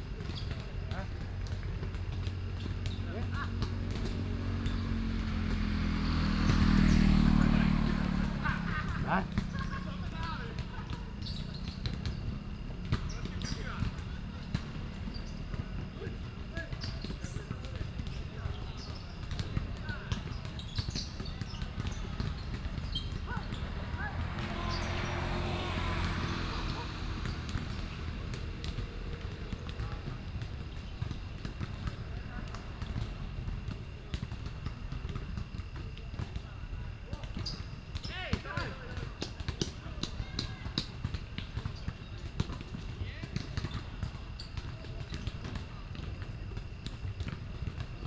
National Chi Nan University, Taiwan - Basketball court
Basketball court, Insect sounds, Traffic Sound
Nantou County, Taiwan, 25 April 2016, ~18:00